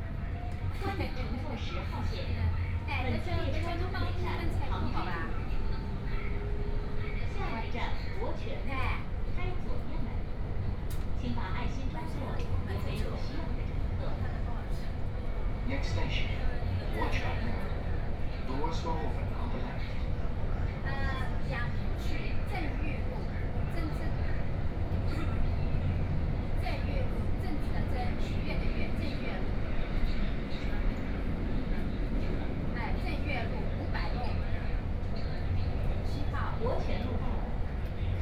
Yangpu District, Shanghai - Line 10 (Shanghai Metro)
from Wujiaochang station to Siping Road station, Binaural recording, Zoom H6+ Soundman OKM II